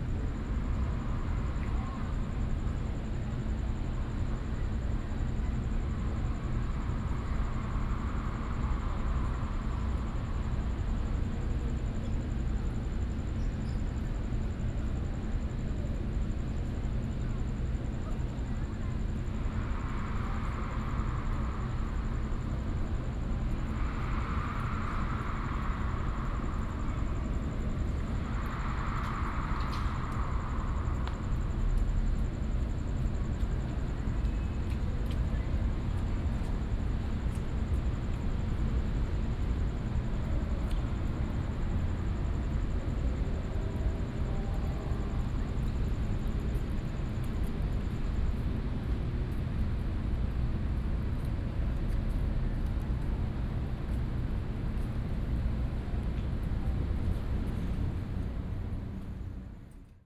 臺灣大學綜合體育館, Da’an Dist., Taipei City - Outside the stadium
Outside the stadium, There are activities performed within the stadium, The cries of the masses
Taipei City, Taiwan